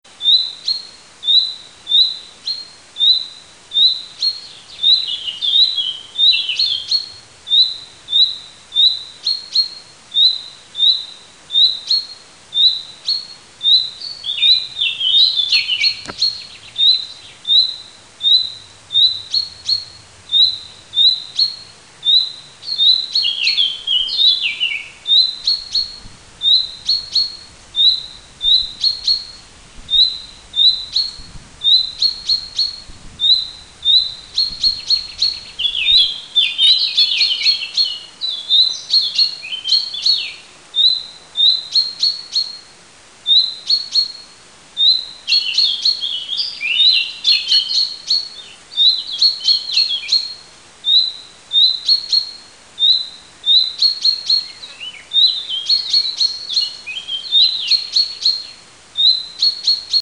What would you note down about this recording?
cincia nel parco del roccolo (giugno 2003)